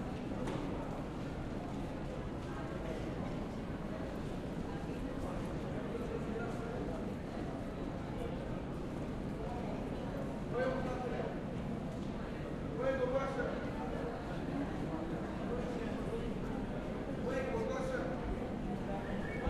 Piata Uniri - Subway to Piata Romana, Bucharest
Piata Uniri - Subway to Piata Romana
Romania, 22 November 2011